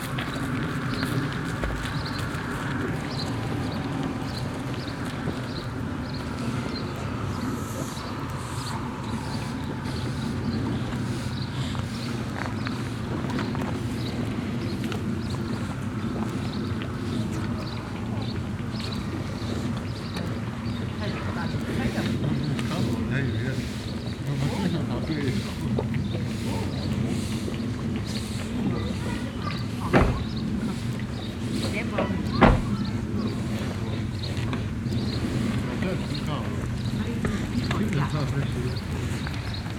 Huldange, Luxemburg - Huldange, bull meadow at street
An der Straße eine Wiese mit mehreren Stieren. Ein Mann fegt im Hinterhof, Kinder spielen an der Straße gegenüber. Der schnaubende Atem eines Stieres am Zaun. Im Hintergrund ein Gespräch.
At the street a meadow with a group of bulls. A man sweeps the floor of his backyard. Children play across the street. The breath of a bull at the fence .In the background a talk.